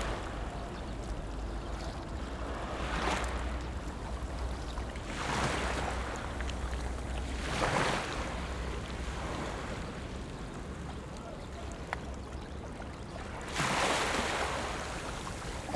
頭城鎮大里里, Yilan County - sound of the waves
Sound of the waves
Zoom H6 MS mic + Rode NT4
Toucheng Township, Yilan County, Taiwan, 21 July 2014